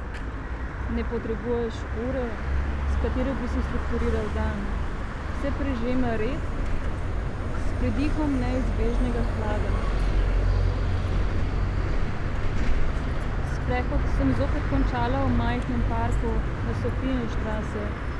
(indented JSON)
{
  "title": "čopova cesta, ljubljana - street reading-fragment 4",
  "date": "2013-06-07 19:09:00",
  "description": "this sonorous fragment is part of Sitting by the window, on a white chair. Karl Liebknecht Straße 11, Berlin, collection of 18 \"on site\" textual fragments ... Ljubljana variation\nSecret listening to Eurydice 10, as part of Public reading 10",
  "latitude": "46.05",
  "longitude": "14.50",
  "altitude": "310",
  "timezone": "Europe/Ljubljana"
}